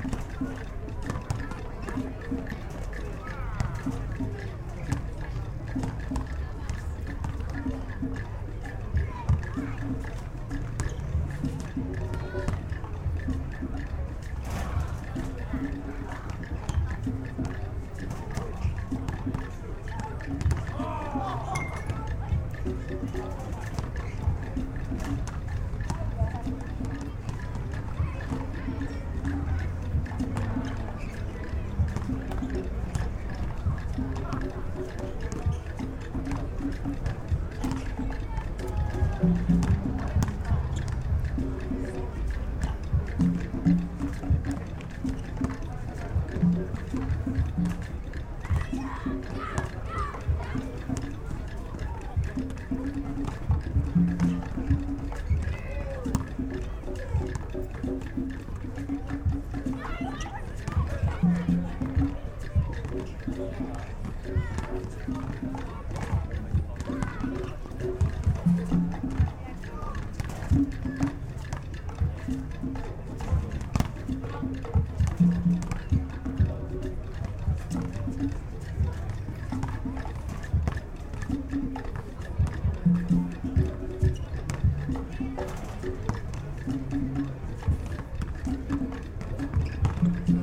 Brighton Beach - Basketball and African Percussion
African percussion and basketball, summer on Brighton seafront.
8 June 2008, 15:00